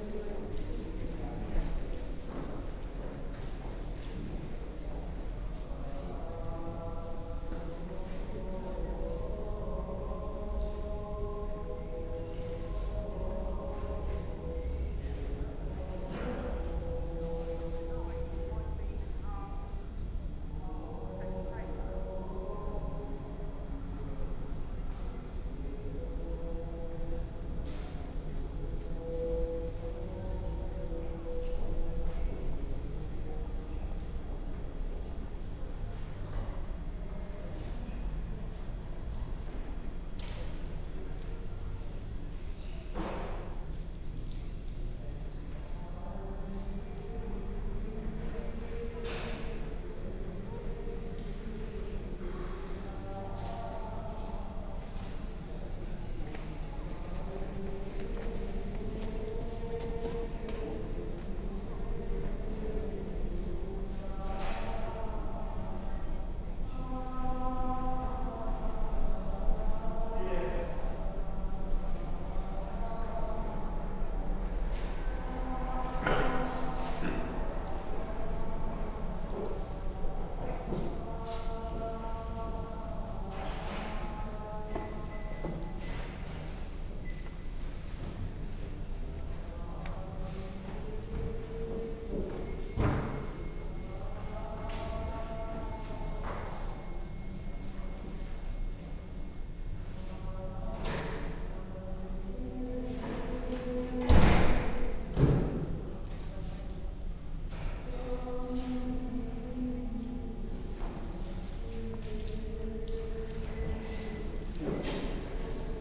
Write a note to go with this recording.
Ten minute meditation in St Marys Minster Church. Parishioners chat as they leave the eucharist service, a till bleeps as Christmas cards are sold for charity on one side of the nave. On the other side, tea and biscuits are offered to visitors (Spaced pair of Sennheiser 8020s with SD MixPre6).